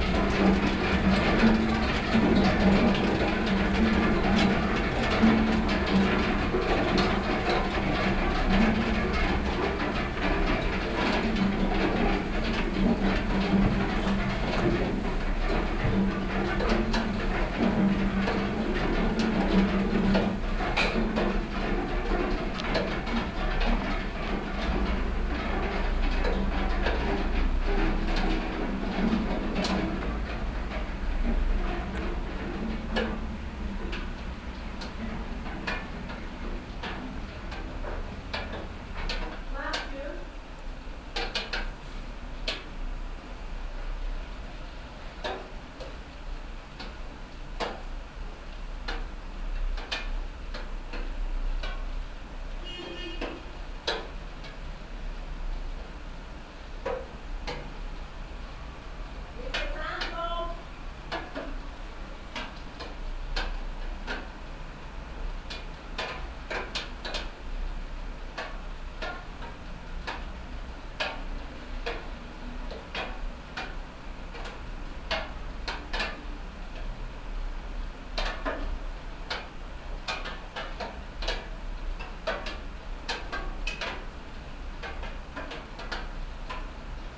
Flat nr. A.Navoi National Park, Tashkent - rain cascade
rain cascading off splash-boards at the back of third floor flat, recorded from open window
Tashkent, Uzbekistan, 22 March 2004